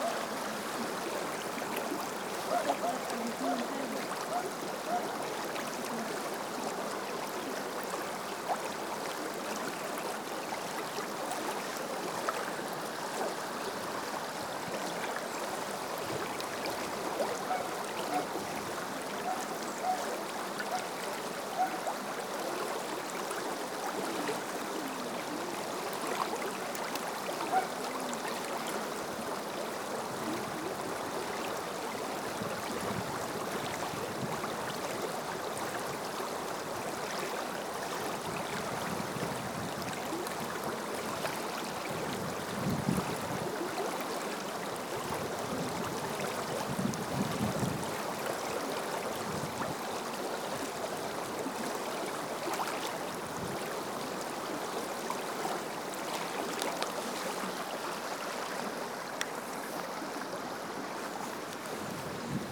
Griffith Park, Dublin, Co. Dublin, Ireland - Murmuring River Tolka
Bealtaine workshops with older people exploring the soundscape and landscape of the River Tolka as it flows through Griffith Park in Drumcondra, Dublin. Recordings were made through a series of walks along the river. The group reflected on these sounds through drawing and painting workshops in Drumcondra library beside the park
13 May 2015